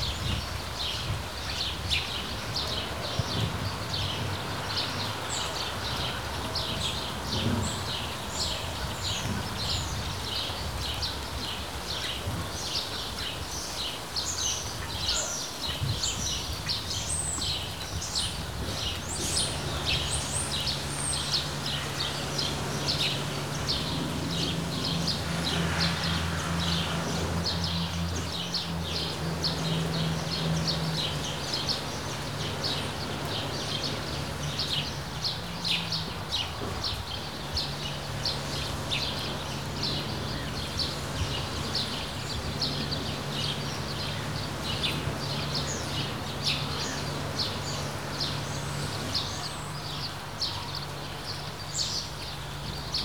Morasko, near garbage truck depot - bird activity during light rain
swarms of birds active in a small park nearby. spacey chirps. rosters and peacocks behind the fence voicing their presence. faint sounds of a mass in a church a few hundreds meters away. worker power-washing the trucks on the other side.